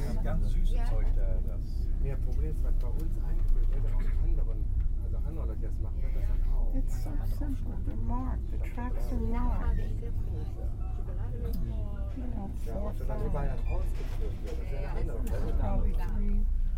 train heading to frankfurt. recorded june 6, 2008. - project: "hasenbrot - a private sound diary"